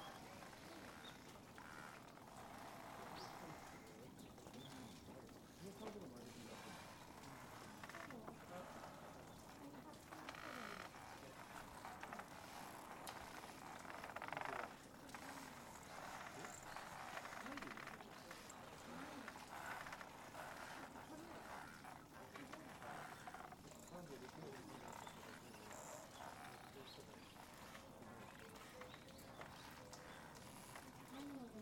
An array of banners mounted on long bamboo canes turn in the breeze.